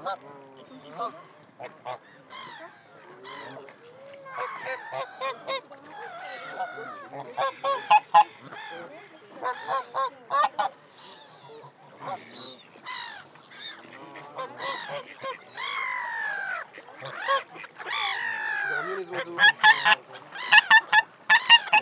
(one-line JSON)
{"title": "Rambouillet, France - An hour close to the birds on the Rondeau lake", "date": "2019-01-01 15:45:00", "latitude": "48.64", "longitude": "1.82", "altitude": "142", "timezone": "Europe/Paris"}